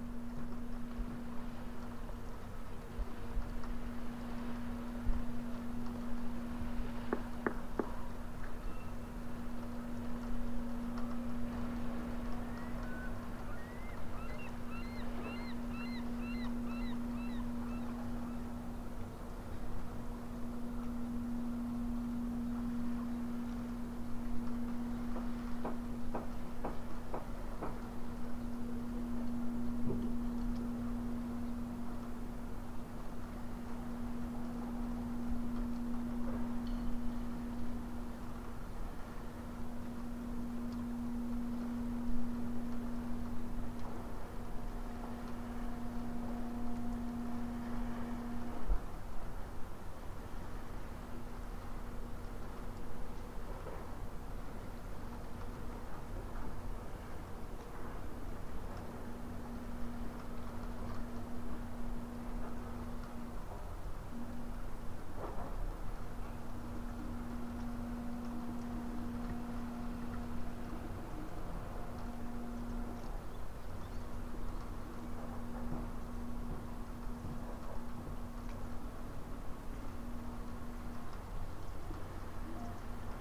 Port Hardy, BC, Canada - What Happens At The End Of The World
closer of Coast Guardian and airport for seaplane/ raining and desert day/
Recording with love